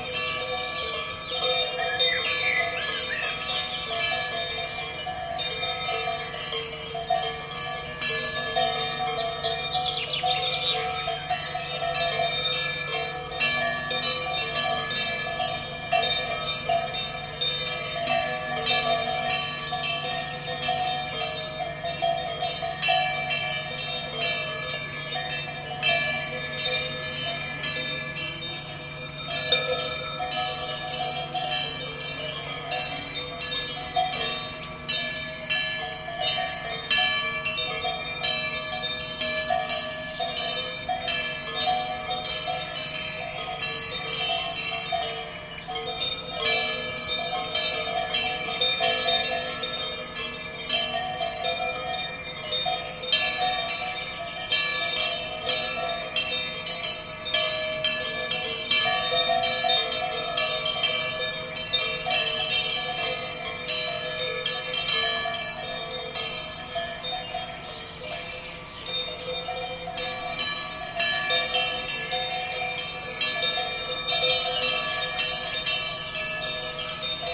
Düdingen, Switzerland - Cow Bells Schiffenensee Lake
Recorded with a pair of DPA 4060s and a Marantz PMD661
16 May 2017